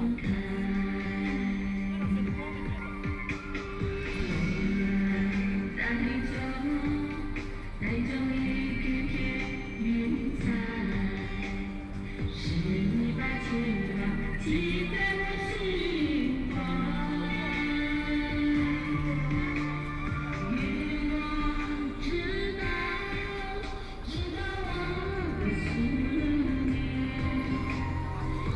Lishui Road, Shanghai - singing
People singing in the street, Traffic Sound, Binaural recording, Zoom H6+ Soundman OKM II ( SoundMap20131127- 6 )